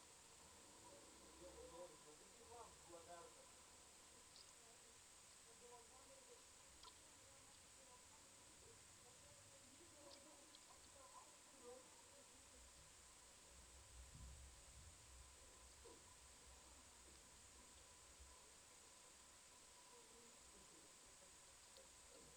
recorded with contact microphone on the one of the oldest lithuanian wooden bridge.